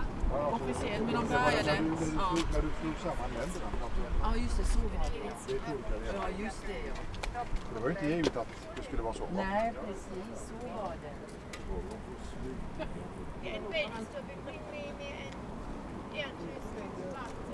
berlin wall of sound-topography of terror. j.dickens 140909
Berlin, Germany